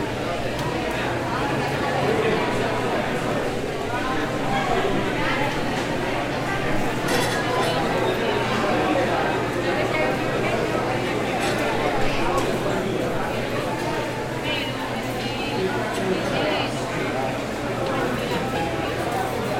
Cl., Medellín, Antioquia, Colombia - Ambiente Zona Comidas Facultad Ingenierias | wallas
Descripción: Zona de comidas de la Facultad de Ingenieras de la Universidad de Medellín.
Sonido tónico: personas hablando, platos, cubiertos y sillas siendo arrastradas.
Señal sonora: avión pasando y fuente.
Técnica: Zoom H6 & XY
Alejandra Flórez, Alejandra Giraldo, Mariantonia Mejía, Miguel Cartagena, Santiago Madera.